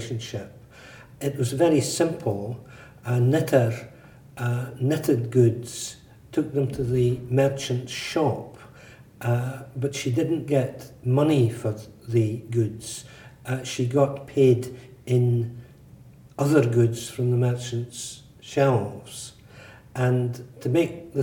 Shetland Museum & Archives, Hay's Dock, Shetland Islands, UK - Excerpt of interview with Brian Smith, talking about Truck
This is an excerpt of a discussion between myself and Brian Smith in the Shetland Museum, about the horrendous Truck system. The Truck system was in operation in Shetland between the 1840s and the 1940s, and was an exploitative relationship between merchants and knitters, whereby knitters were paid in useless goods like sweets and tea for their amazing handiwork. These women were then forced to barter these useless goods with farmers and other merchants for stuff they could actually eat, like bread and potatoes. Brian Smith is the archivist at the Shetland Museum and Archives and is very knowledgeable about Truck. Here he talks about how the Truck system operated even after it was officially made illegal.
3 August 2013